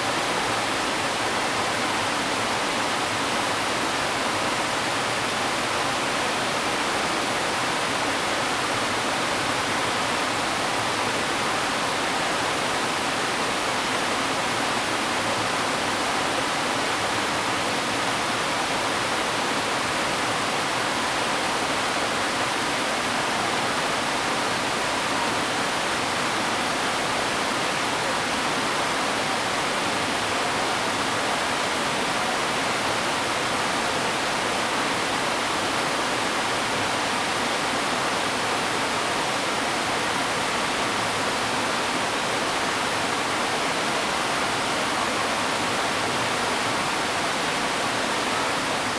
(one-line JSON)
{"title": "得子口溪, Jiaoxi Township, Yilan County - Waterfalls and stream", "date": "2016-12-07 11:27:00", "description": "Waterfalls and stream\nZoom H2n MS+ XY", "latitude": "24.83", "longitude": "121.75", "altitude": "145", "timezone": "GMT+1"}